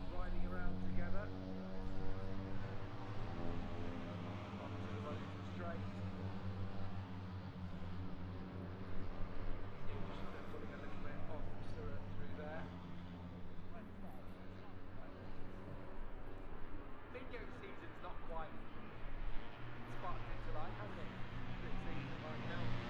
Silverstone Circuit, Towcester, UK - british motorcycle grand prix 2022 ... moto three
british motorcycle grand prix 2022 ... moto three free practice two ... zoom h4n pro integral mics ... on mini tripod ...
East Midlands, England, UK, 5 August 2022, 13:15